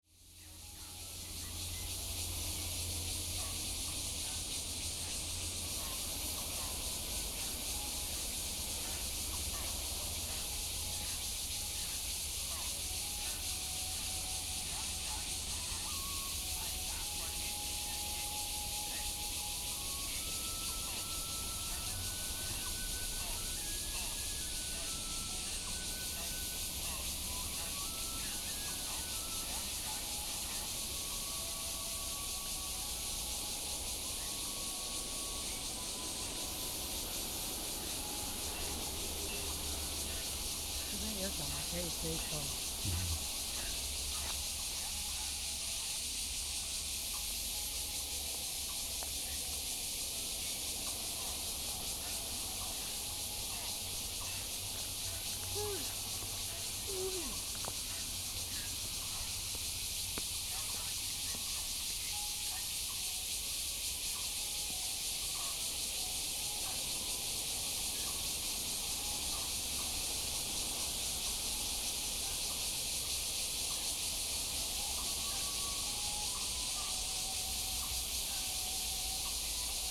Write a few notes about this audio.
Many elderly people doing exercise in the park, Bird calls, Cicadas cry, Traffic Sound